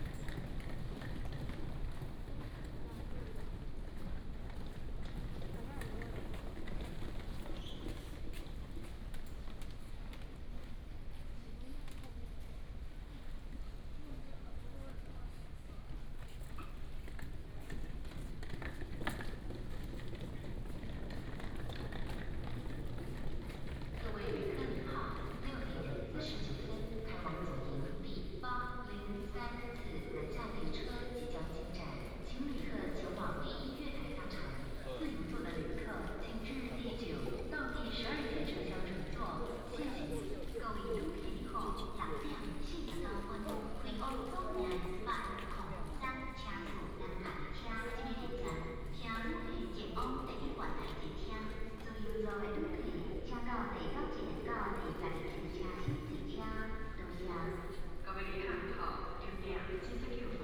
HSR Taoyuan Station, Taiwan - At the station platform
At the station platform, Binaural recordings, Sony PCM D100+ Soundman OKM II